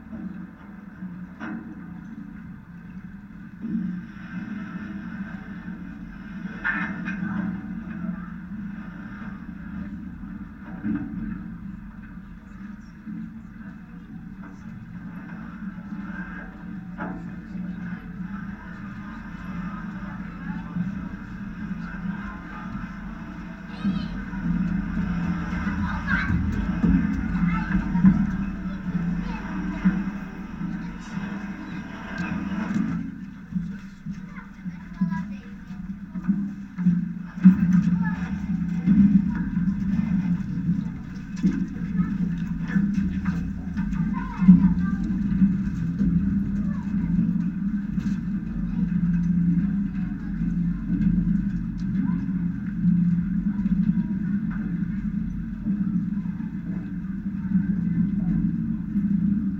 Fluxus bridge, Vilnius, Lithuania, contact
contact microphones on metalic construction of so-called Fluxus Bridge
Vilniaus miesto savivaldybė, Vilniaus apskritis, Lietuva, 18 October 2019